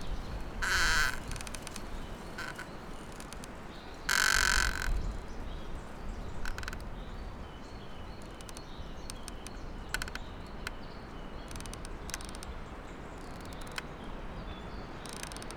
Morasko Nature Reserve, near beaver pond - jammed branch
a snapped branch jammed between two trees, creaking as the trees swing in strong wind.
Morasko Meteorite Nature Reserve project